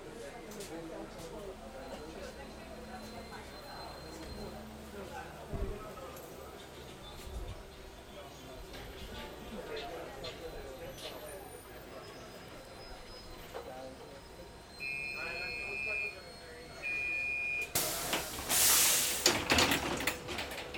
Cl., Medellín, Belén, Medellín, Antioquia, Colombia - MetroPlus, recorrido estacion Universidad De Medellin - Los Alpes.
Es un paisaje muy contaminado auditivamente, donde hace alarde el constante bullicio humano y la maquinaria destinada para el transporte. Lo cual opaca casi totalmente la presencia de lo natural y se yuxtapone el constante contaminante transitar humano.
Valle de Aburrá, Antioquia, Colombia, September 2022